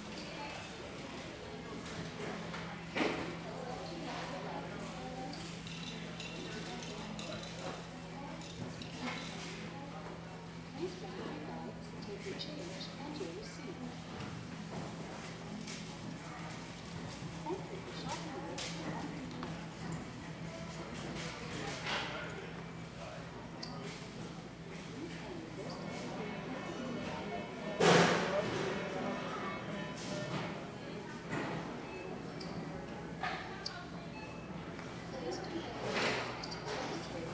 The Home Depot Emeryville 3.
The Home Depot Emeryville